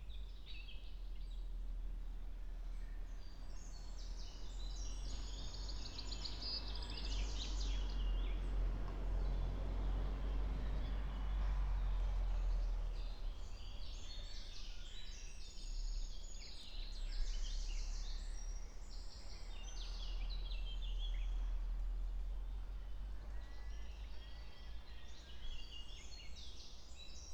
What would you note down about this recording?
08:13 Globocek, Ribniško selo, Maribor, (remote microphone: AOM5024HDR/ IQAudio/ RasPi Zero/ 3G modem